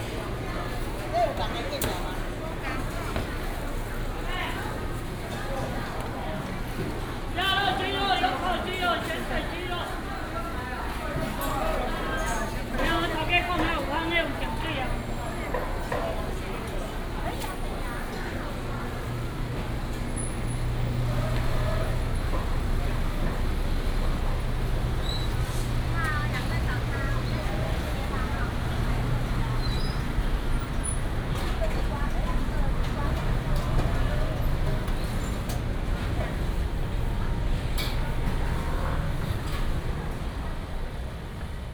Nanping Rd., Taoyuan Dist., Taoyuan City - Walking in the traditional market
Traffic sound, Walking in the traditional market